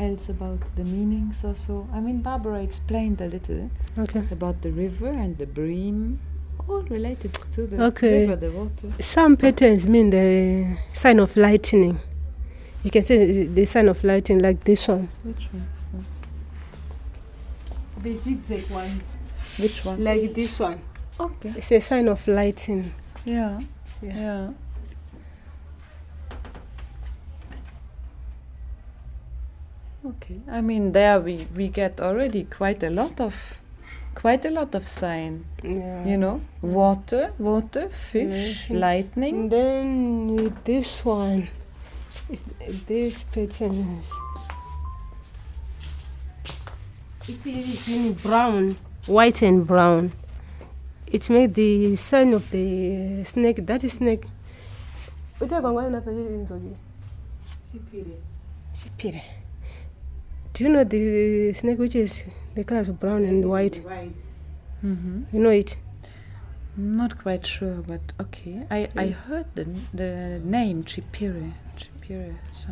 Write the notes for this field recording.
Barbara and Viola talk about the traditional patterns used in the weaving and some of their meanings, like the bream (the fish bone), the water (the Zambezi), the lightning or the hut (tracks 06 and 07). Earlier (track 2), they explained that the patterns were used in the traditional BaTonga beadwork and were then transferred in to weaving designs. The Ilala-grass, which is used in basket-weaving is an indigenous natural resource, while beads would need to be purchased. The entire recording with Barbara is archived at: